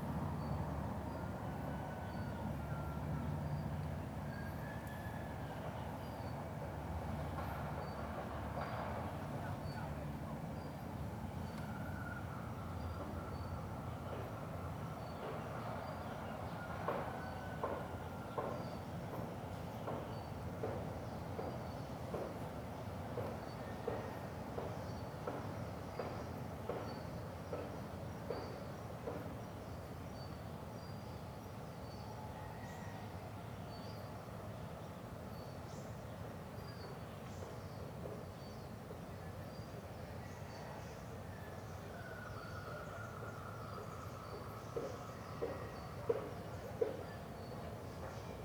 撒固兒步道, Hualien City - In the woods
In the woods, Chicken sounds, Construction of the sound, Aircraft sound, The frogs chirp
Zoom H2n MS+XY +Sptial Audio
Hualien City, Hualien County, Taiwan, 14 December, ~10:00